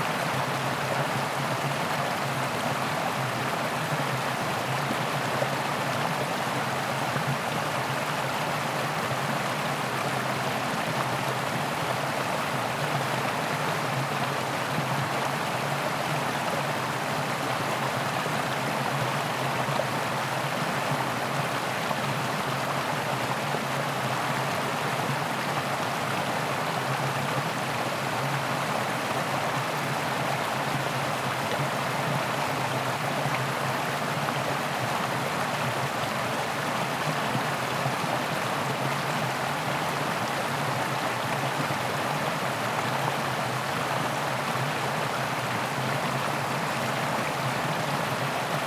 Château de Reinhardstein, Ovifat, Belgique - Ruisseau - Small river
small but powerful river.
Tech Note : Sony PCM-D100 internal microphones, wide position.
Liège, Wallonie, België / Belgique / Belgien